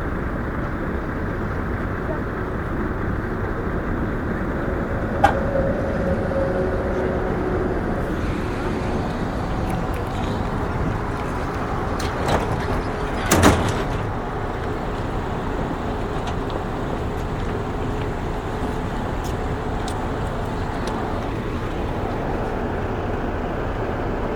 Wyszynskiego, Szczecin, Poland
City center ambiance.